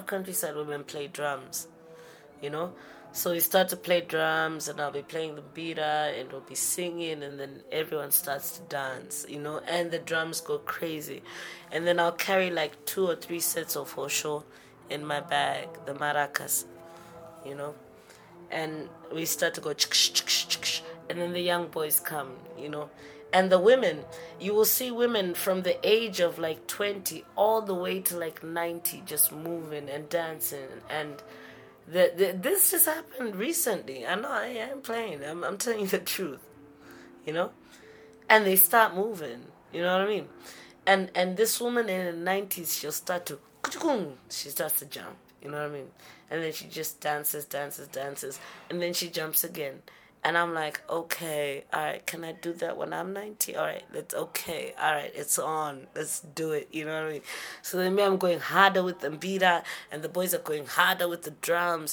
… Chiwoniso continues talking about her love and trust in the young women entering into the performing arts in Zim ; and her adoration for the women in the countryside whom she loves joining in music. The recording ends with Chi giving a beautiful description of a communal jamming and dancing with women in the countryside; and a line from a song…
Chiwoniso Maraire was an accomplished Zimbabwe singer, songwriter and mbira artist from a family of musicians and music-scholars; she died 24 July 2013.
Harare, Zimbabwe, 25 August